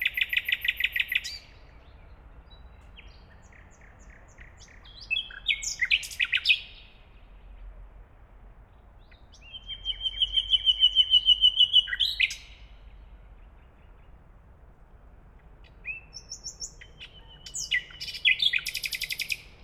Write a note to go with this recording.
A Nightingale sings in front of the microphone, others are audible left and right in a distance. (Tascam DR-100, Audio Technica BP4025)